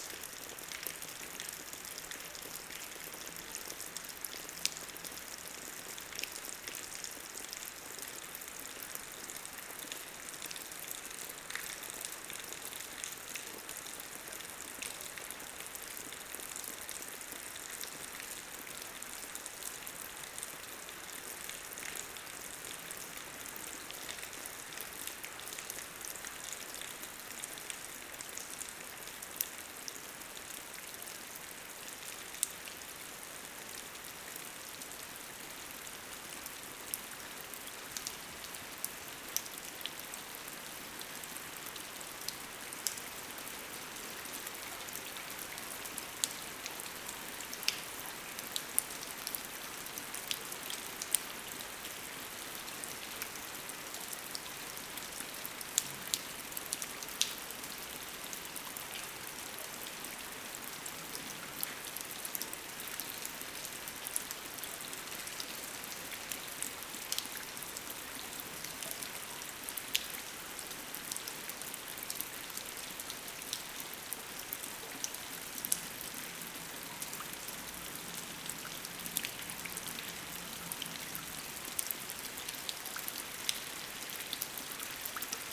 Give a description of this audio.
Into the small Vix village, rain is falling hardly. During an hiking, we are waiting since two hours this constant and strong rain stops. We are protected in a old providential wash-house.